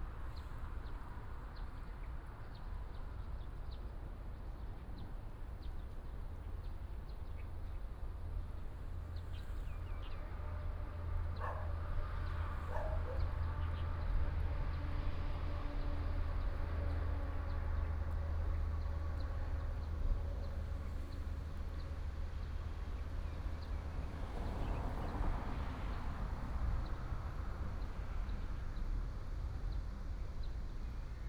海濱路240-2號, North Dist., Hsinchu City - Facing the woods
Facing the woods, traffic sound, bird sound, Dog, Cicada cry, The sound of the garbage disposal field